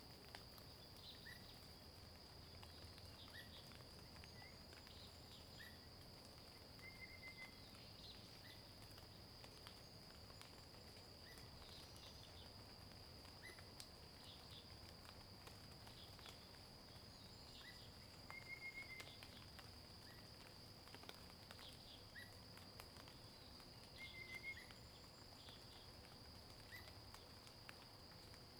水上巷, 埔里鎮桃米里, Taiwan - In the bamboo forest
In the bamboo forest, birds sound, water droplets
Zoom H2n MS+XY
21 April 2016, 06:13